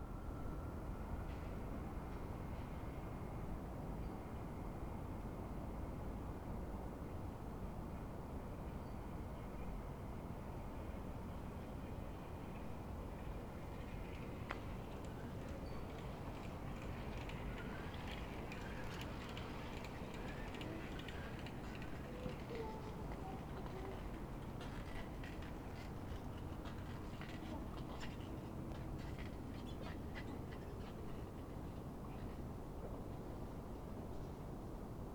Berlin: Vermessungspunkt Maybachufer / Bürknerstraße - Klangvermessung Kreuzkölln ::: 06.09.2010 ::: 00:43
Berlin, Germany, 6 September 2010